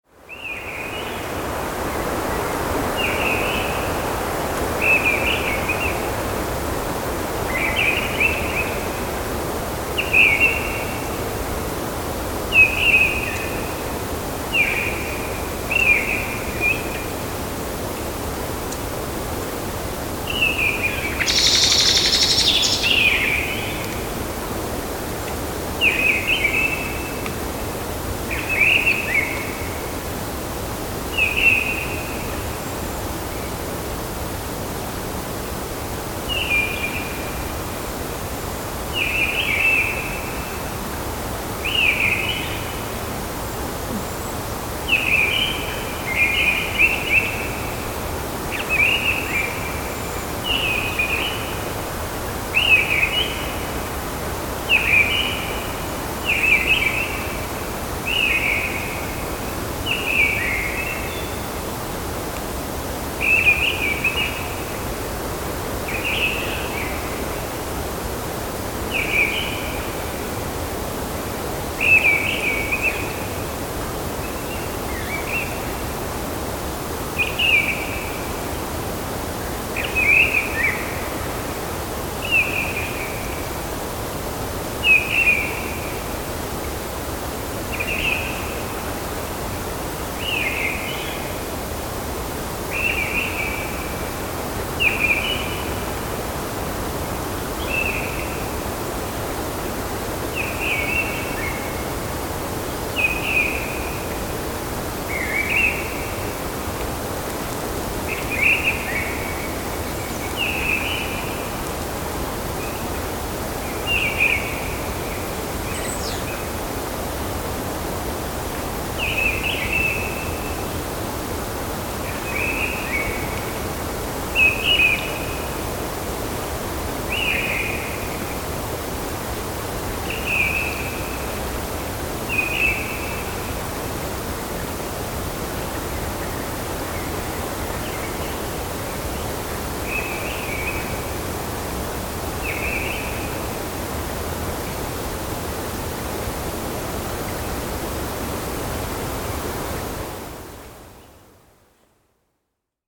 Saarjõe, Estonia, windy evening in the forest
Mistle Thrush, Blackbird, forest, spring